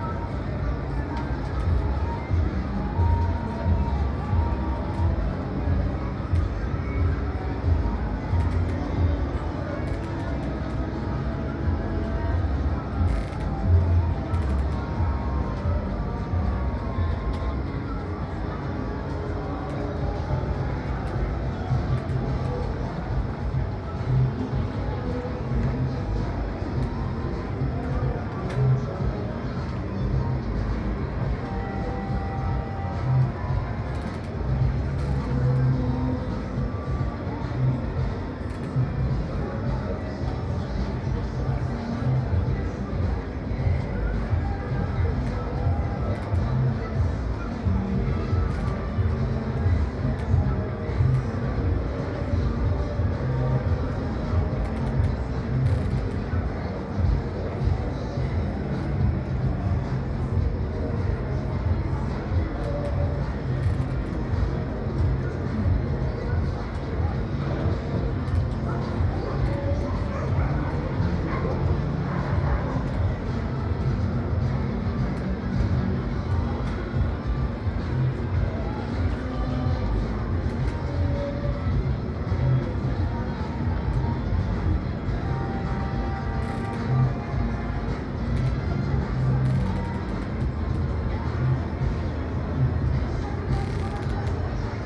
{"title": "Marina Kalkan, Turkey - 914 distant parties", "date": "2022-09-21 00:05:00", "description": "Distant recording of multiple parties happening in Kalkan city.\nAB stereo recording (17cm) made with Sennheiser MKH 8020 on Sound Devices MixPre-6 II.", "latitude": "36.26", "longitude": "29.41", "altitude": "6", "timezone": "Europe/Istanbul"}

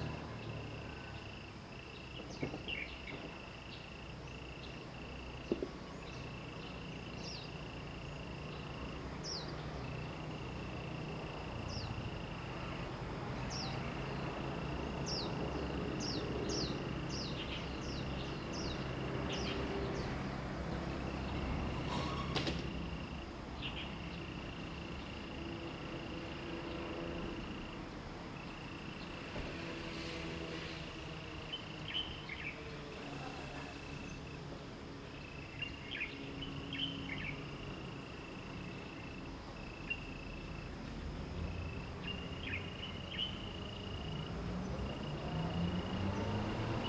{"title": "Taomi Ln., Puli Township - Bird calls", "date": "2015-04-30 08:03:00", "description": "Bird calls, Frogs chirping, In the parking lot, Sound of insects\nZoom H2n MS+XY", "latitude": "23.94", "longitude": "120.93", "altitude": "466", "timezone": "Asia/Taipei"}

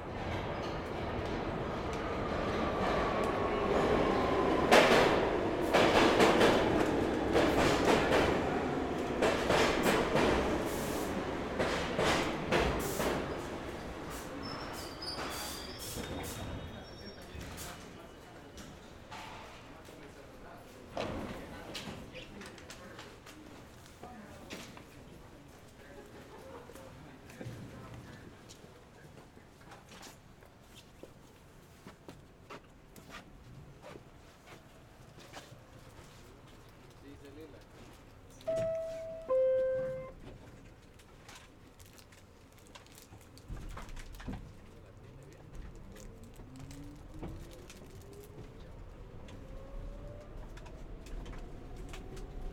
{"title": "St, Lexington &, E 51st St, New York, NY, USA - 6 train at Lexington Avenue/51st Street station", "date": "2022-02-01 15:30:00", "description": "Getting the 6 train at Lexington Avenue/51st Street station.\nSome crackling sounds from a man carrying a bag of recycled bottles.", "latitude": "40.76", "longitude": "-73.97", "altitude": "22", "timezone": "America/New_York"}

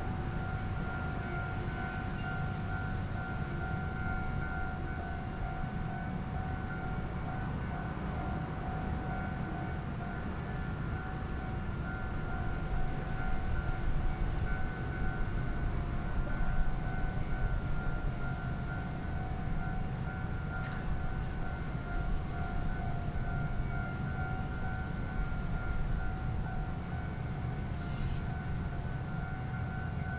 {"title": "Haibin Rd., Tongxiao Township - Train traveling through", "date": "2017-02-13 11:57:00", "description": "Near the railroad tracks, Train traveling through\nZoom H6 +Rode NT4", "latitude": "24.49", "longitude": "120.68", "altitude": "12", "timezone": "GMT+1"}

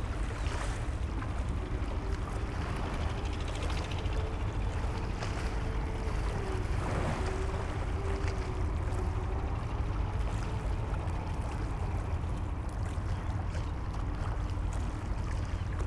{"title": "Baoyang Branch Rd, Baoshan Qu, Shanghai Shi, China - Noisy barge in cruise terminal", "date": "2017-05-25 13:42:00", "description": "A simple barge steered by one man and driven by an old retrofitted motor, used to transport passengers in the terminal. We can hear the change of gears. Sound of nearby clapping water\nUne barge rudimentaire, pilotée par un homme et équipé d’un ancien moteur, bruyant. La barge est utilisée pour transporter des passagers dans le terminal. On peut entendre les changements de vitesse du moteur. Bruit d’eau sur la berge.", "latitude": "31.41", "longitude": "121.50", "timezone": "Asia/Shanghai"}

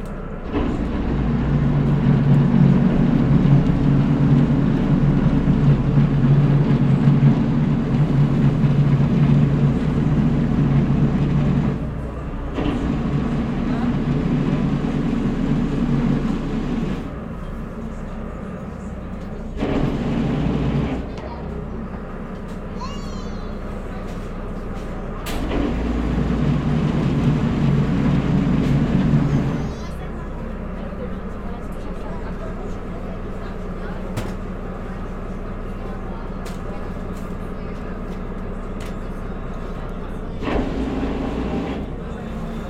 {"title": "Quai Napoléon, Ajaccio, France - Motor Boat", "date": "2022-07-28 14:00:00", "description": "Motor Boat\nCaptation : ZOOM H6", "latitude": "41.92", "longitude": "8.74", "timezone": "Europe/Paris"}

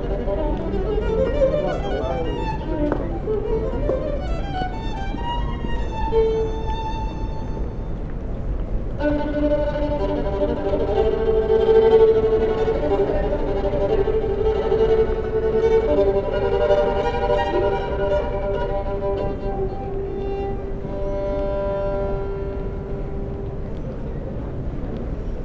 {"title": "Violinist, High St, Worcester, UK - Violinist", "date": "2019-11-01 12:45:00", "description": "A violinist plays classical music to shoppers and passers by on the busy High Street.\nMixPre 6 II 2 x Sennheiser MKH 8020s", "latitude": "52.19", "longitude": "-2.22", "altitude": "30", "timezone": "Europe/London"}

